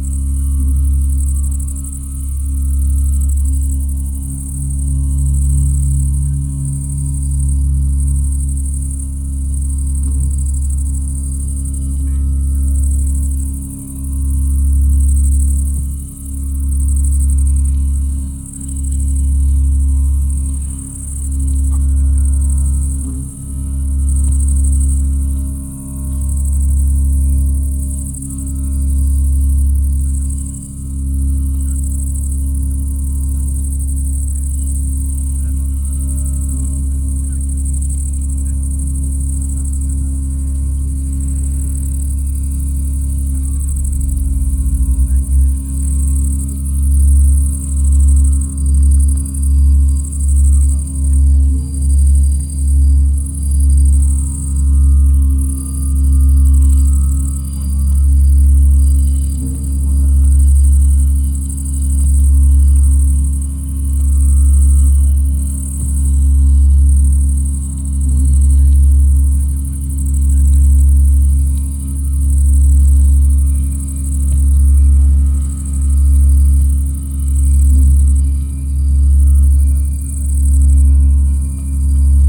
Mt Ithome Monastery, Messini, Greece - Black Seas Messene on Mt Ithome
Final performance of Tuned City Ancient Messene in front of the monastery on Mount Ithome, Black Seas Messene by Steve Bates (CA), ILIOS (GR), Nikos Veliotis (GR), mixed with the local crickets. Olympus LS10 with primo omnis.
3 June 2018, ~20:00